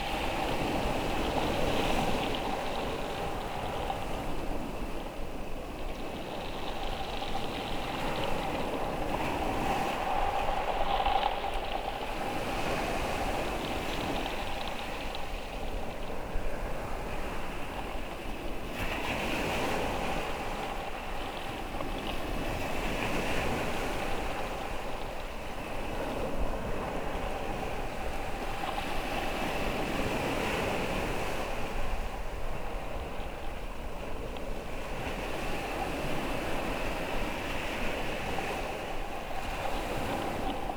Covehithe, UK - Waves sounding in and around a bleached tree trunk lying on the beach
Covehithe is a beautiful and very surreal spot on the Suffolk coast. The sea is eroding the soft sandy cliff at a speed that makes it look different on every visit. Crops disappear over the top frequently. One time the beach below was littered with onions. On this occasion barley has fallen over but is still growing fine in the landslides. Trees from an old wood lie on the sand and shingle bleached white by waves that scour through the roots and remaining branches. The sculptural forms are amazing.
The sound of the waves can be heard through tide washed trunks by pressing your ear to the wood and be picked up by a contact mic. The contact mic was recorded in sync with normal mics listening to the waves. This track is a mix of the two layers with the mono tree sound in the middle and the sea in stereo either side.